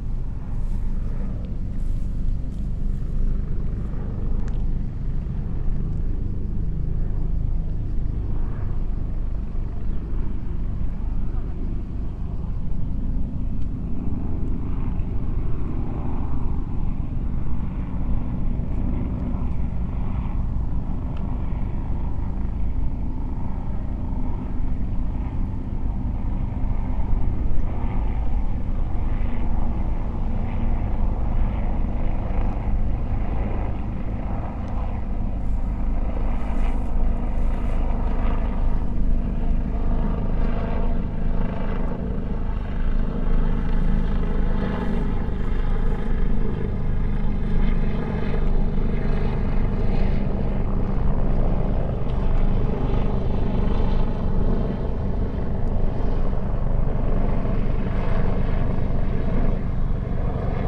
Lake Biwa Shoreline, Kusatsu-shi, Shiga-ken, Japan - Helicopters
Soundscape dominated by three helicopters circling overhead and to the southwest of the Lake Biwa shoreline in Kusatsu. The helicopters appeared to be assisting in a police investigation. Audio was captured by a Sony PCM-M10 recorder and two Micbooster Clippy omnidirectional mics attached to a bicycle handelbar bag for a quasi-binaural sound image.